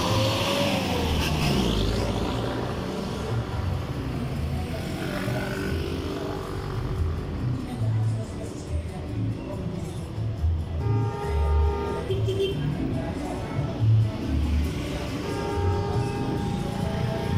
Información Geoespacial
(latitud: 6.256802, longitud: -75.615816)
Bar
Descripción
Sonido Tónico: Gente hablando, música, carros pasando
Señal Sonora: Bocinas de carros
Micrófono dinámico (celular)
Altura: 1, 75 cm
Duración: 3:00
Luis Miguel Henao
Daniel Zuluaga